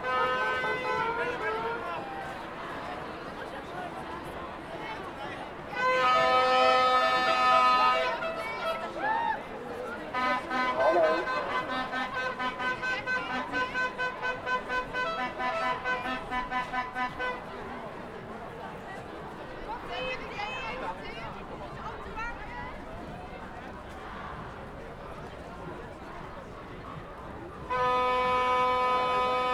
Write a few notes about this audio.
What do we want? CLIMATE JUSTICE! When do we want it? NOW